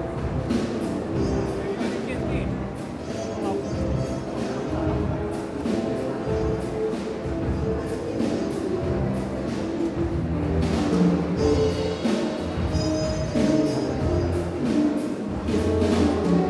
Shinkarenko jazz band at the international Vilnius Art11 fair opening